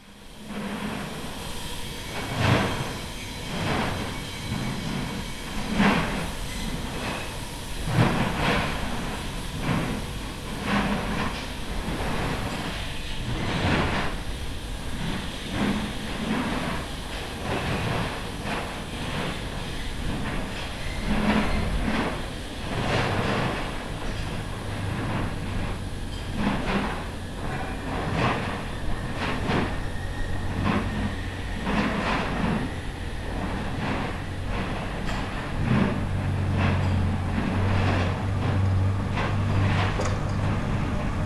Lithuania, Utena, industrial
at the entrance of metal product factory
20 January 2011